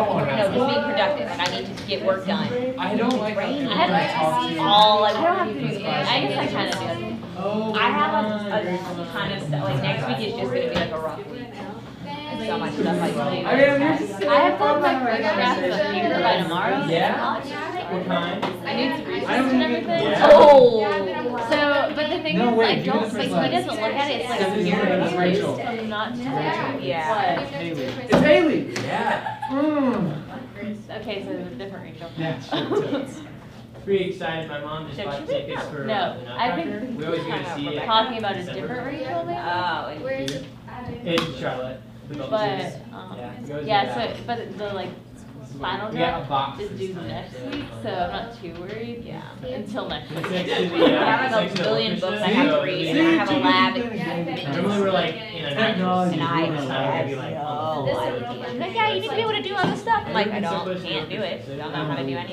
Appalachian State University, Boone, NC, USA - audio documentary class people gathering chatting
This is students chatting in the ASU CI4860 Audio Documentary class before class begins.
24 September 2015